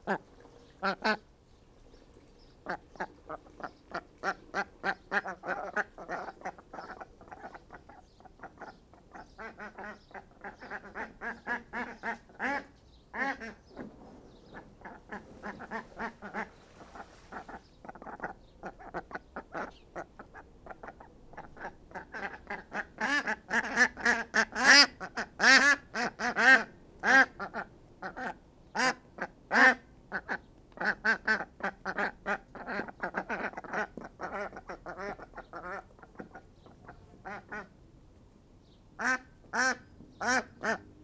The Ducks, Reading, UK - Honey and Pretzel and me doing the chores
This is the sound of my two remaining naughty ducks, Honey and Pretzel. Sadly Bonbon is no longer with us. But as you can hear, the other two make up for it with extra quacking. Every day I give them clean water (which they destroy instantly) and some food pellets (which they sometimes eat, but sometimes they forget because they are too busy eating insects instead). I also periodically empty out their paddling pool, scrub all the poo and algae off it, and refresh it with clean water (which they destroy instantly... do you sense a theme?) They quack almost constantly and I love the sound. They have a very noisy, alarmed sort of sound which they direct at us and which you can hear here, but then also they have this little chuntering duck banter which they seem to do just between themselves. They are very rarely silent, even when they are just resting in the long grass they are muttering to each other in duck.
22 June, ~17:00